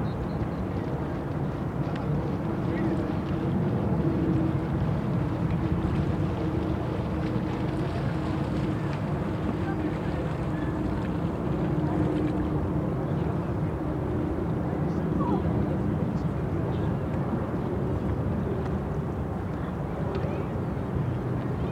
Stroomi Beach Tallinn, seaside
recording from the Sonic Surveys of Tallinn workshop, May 2010